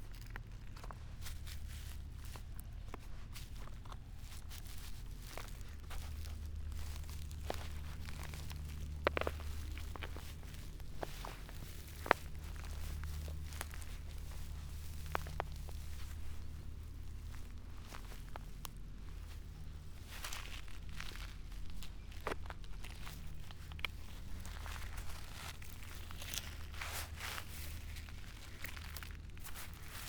river Drava, Loka - dry stones, skin
Starše, Slovenia, 12 October 2014